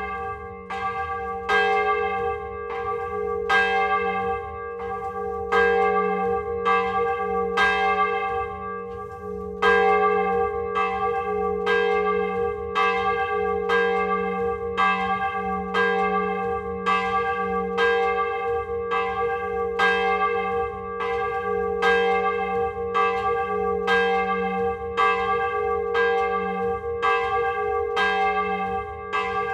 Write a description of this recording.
Prépotin (Parc Naturel Régional du Perche), église - L'Angélus